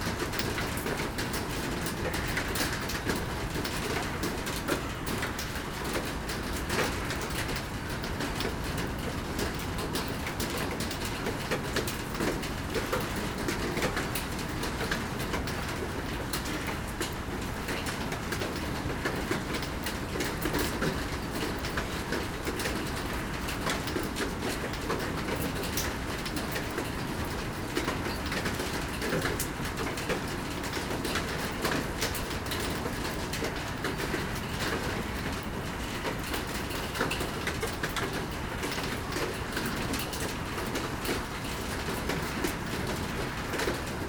Seraing, Belgique - Rain and crows
In the abandoned coke plant, waiting in the tar and benzol section, while rain is falling. A lot of crows are calling and shouting. These birds love abandoned factories as it's very quiet, there's nobody.